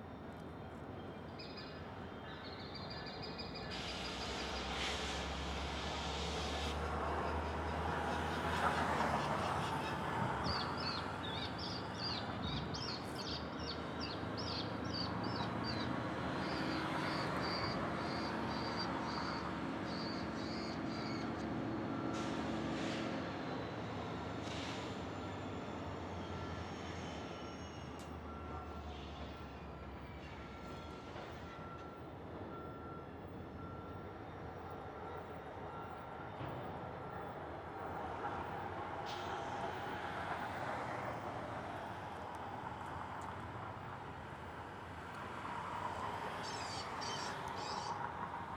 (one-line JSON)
{"date": "2022-03-17 13:52:00", "description": "Recorded on the St Anthony Parkway Bridge above the Northtown Rail Yard. Several trains can be heard. Some are stopping, some are passing through, and one down the line was forming a train. Bridge vehicle traffic and wildlife can also be heard.", "latitude": "45.03", "longitude": "-93.27", "altitude": "257", "timezone": "America/Chicago"}